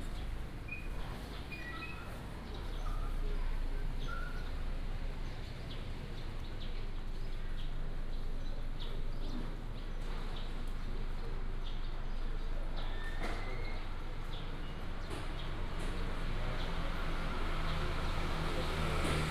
惠愛路, Guanxi Township, Hsinchu County - Walking in the alley
Walking in the alley, Traffic sound, Morning in the area of the market
Guanxi Township, Hsinchu County, Taiwan, 25 July 2017, ~07:00